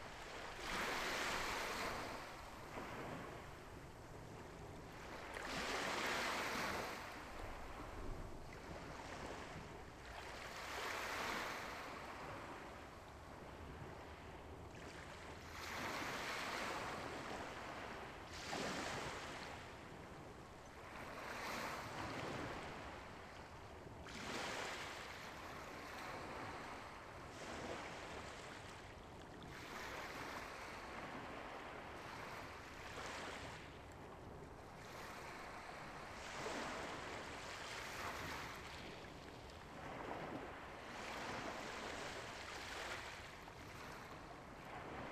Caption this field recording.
Point Molate beach, now closed to the public, pretty spot with nice views of Richmond bridge, very polluted .. I am cleaning this beach for few months now and it really made me think of importance of clean environment..... I like this recording of waves for changes in their tempo and made me think of making series of such long recordings of waves hitting a shore... Please, help to clean our planet....